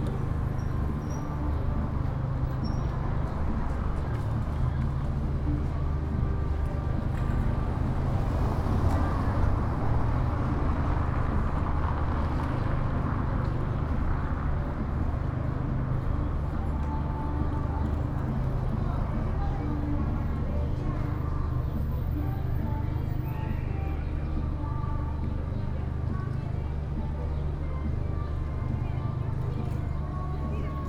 C. Francisco I. Madero, Centro, León, Gto., Mexico - En las mesas de la parte de afuera de la nevería Santa Clara.
At the tables outside the Santa Clara ice cream parlor.
I made this recording on march 29th, 2022, at 6:14 p.m.
I used a Tascam DR-05X with its built-in microphones and a Tascam WS-11 windshield.
Original Recording:
Type: Stereo
Esta grabación la hice el 29 de marzo de 2022 a las 18:14 horas.
Guanajuato, México